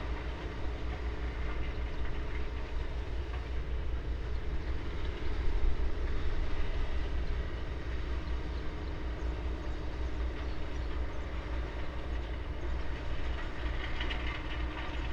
Ta'Zuta quarry, Dingli, Is-Siġġiewi, Malta - quarry ambience
Ta'Zuta quarry, operates a ready mixed concrete batching plant and a hot asphalt batching plant, ambience from above
(SD702, DPA4060)
Ħad-Dingli, Malta, 6 April